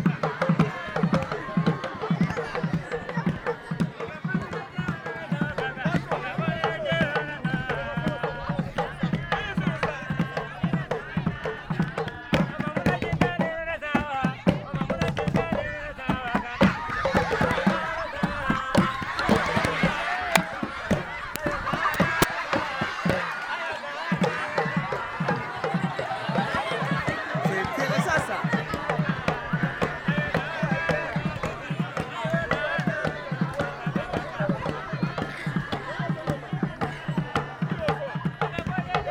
Sourou, Burkina Faso - traditionnal music
A party organised around the fire at night - dances and music
Lanfièra, Burkina Faso, 22 May 2016, 21:52